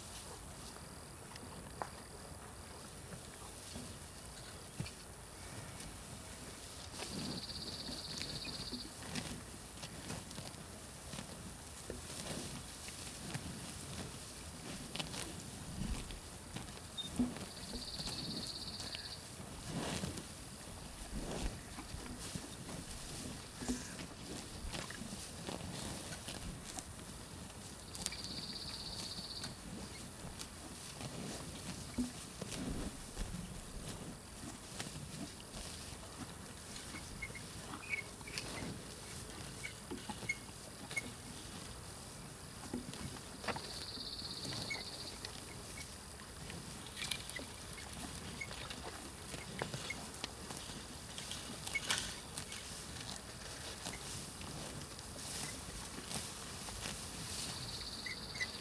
Bourgueil, France - Cows eating grass in Bourgueil
Cows pushing their heads through a squeaky metal fence, breathing on the sound recorder to eat grass.
The grass is always greener on the other side...